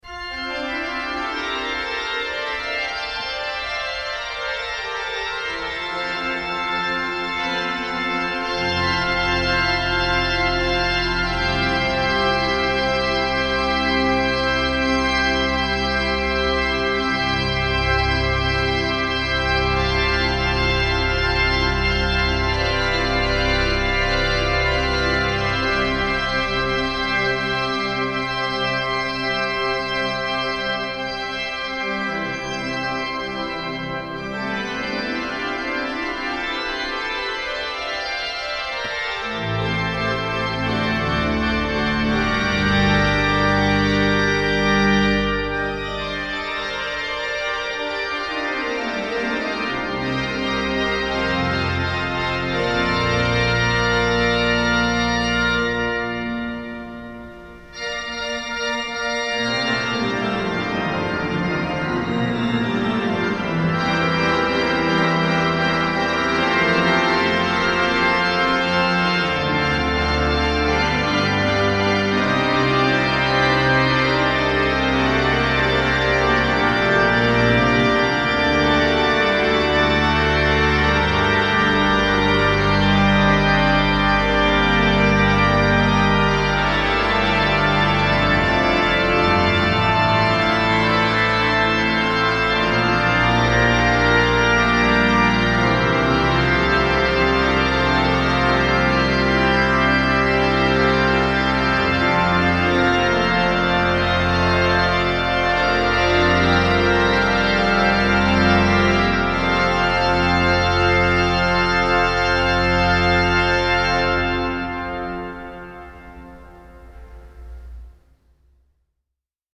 Organ concert Marienkirche - 1/7 Organ concert Marienkirche
01 Dietrich Buxtehude_ Toccata in F-Dur
Berlin, Germany